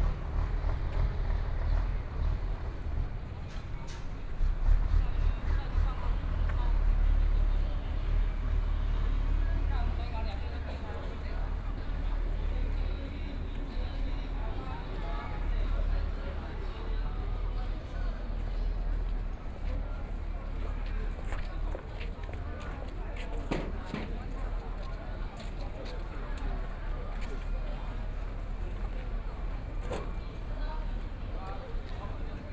旗津區振興里, Kaohsiung City - In the small square
In the small square, Tourist, Hot weather, Birds